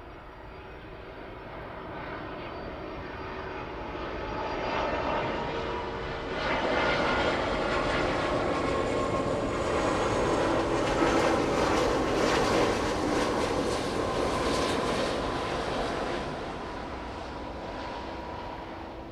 Near the airport, The plane landed, The plane was flying through, Zoom H2n MS+XY